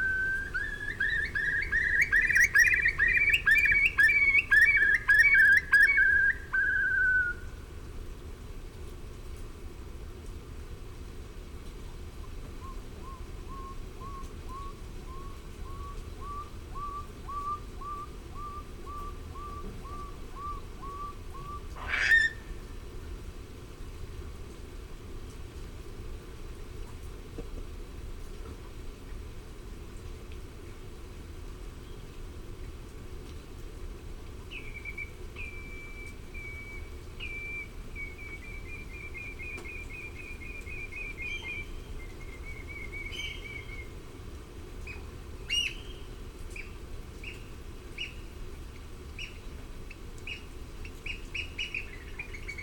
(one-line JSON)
{"title": "Argyll and Bute, UK - Lochan soundscape ...", "date": "2009-04-29 05:30:00", "description": "Dervaig ... lochan soundscape ... bird calls ... mallard ... greylag ... grey heron ... curlew ... redshank ... oystercatcher ... common sandpiper ... also curlew and redshank in cop ... wet and windy ... parabolic to Sony minidisk ...", "latitude": "56.58", "longitude": "-6.19", "altitude": "3", "timezone": "Europe/London"}